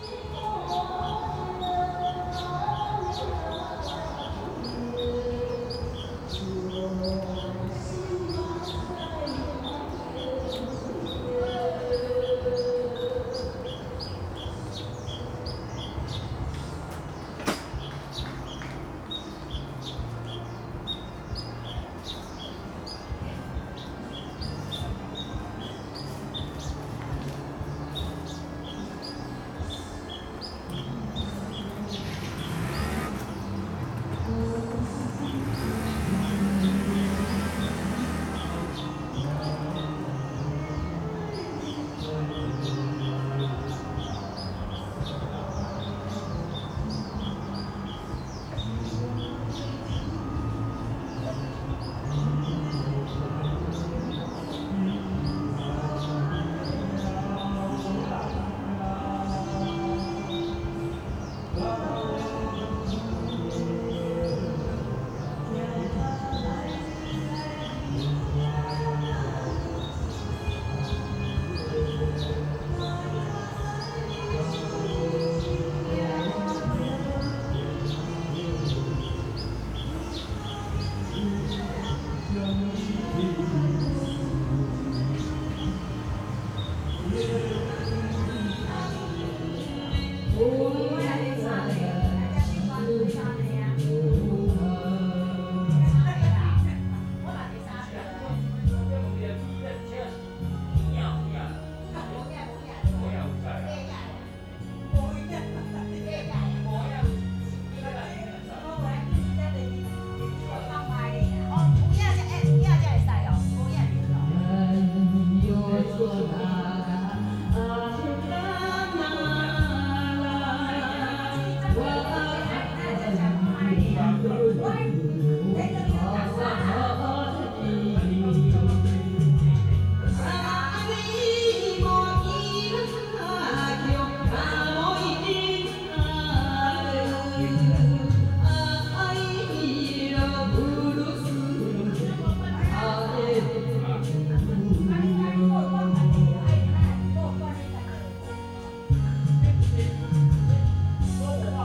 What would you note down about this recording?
karaoke, Birds singing, Group of elderly people singing, Traffic Sound, Zoom H4n +Rode NT4